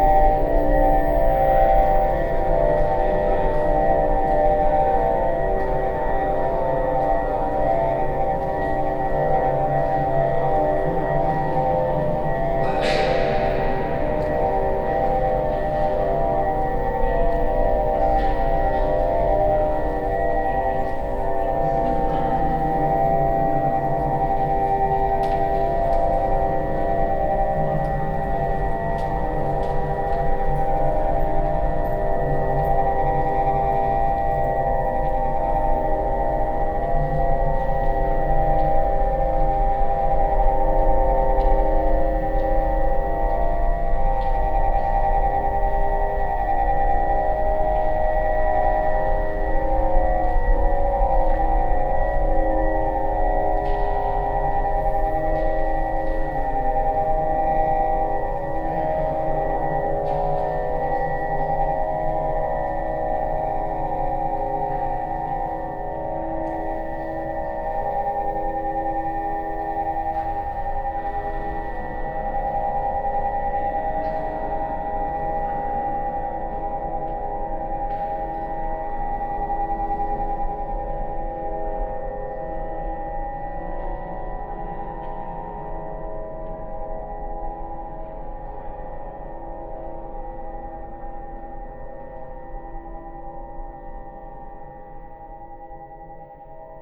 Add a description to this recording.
Inside the first hall of the bridge. The sound of a mechanic installation by the artist group "Therapeutische Hörgruppe Köln" during the Brueckenmusik 2013. soundmap nrw - social ambiences, art spaces and topographic field recordings/